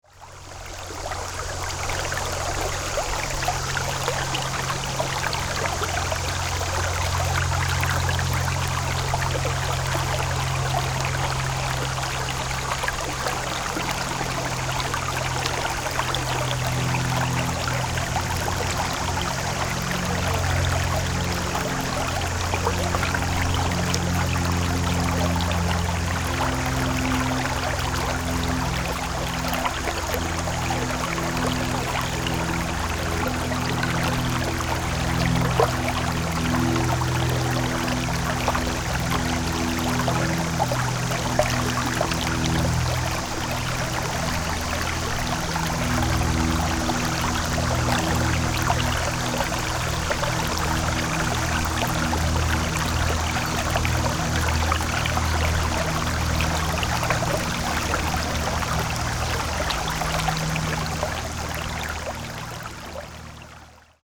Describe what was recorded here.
Standing in streams, Aircraft hovered past, Sony PCM D50